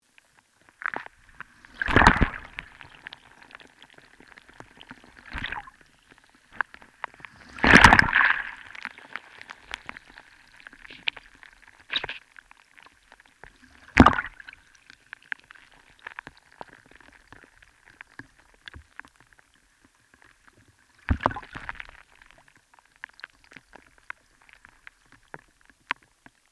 Santa Croce. Seashore. - Sta. Croce seashore high quality
Sorgenti di Aurisina Province of Trieste, Italy